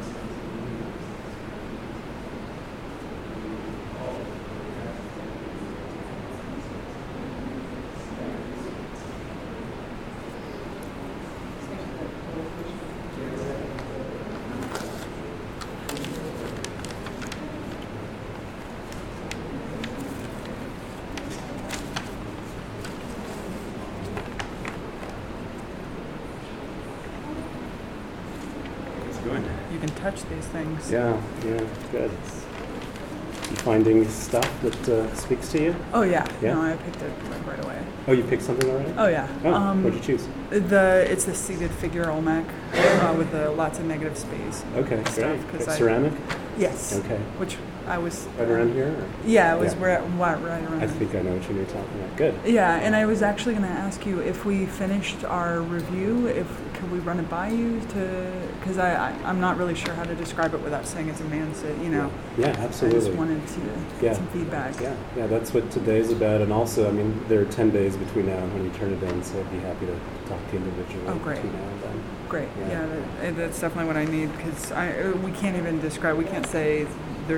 W 14th Ave Pkwy, Denver, CO - Mesoamerican Section Of DAM
This is a recording of a teacher speaking to a student regarding a project in the Denver Art Museum Mesoamerican / Precolumbian section.
Denver, CO, USA, 2 February 2013